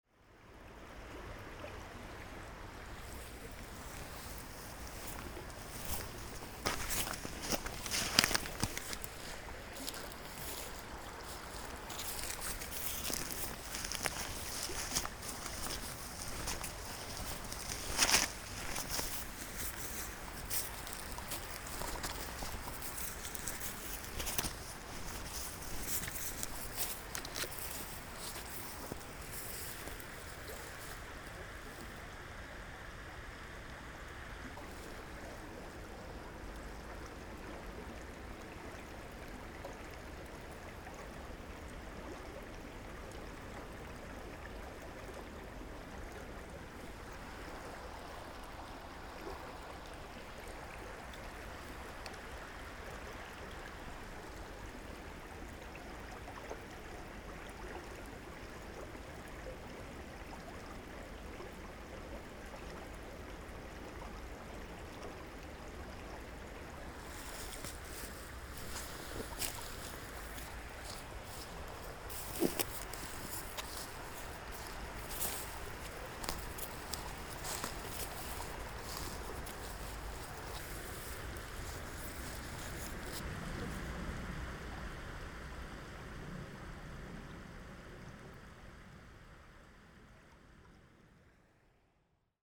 au bord de l'eau - Cornimont, France
Mon village et l'artiste // PNR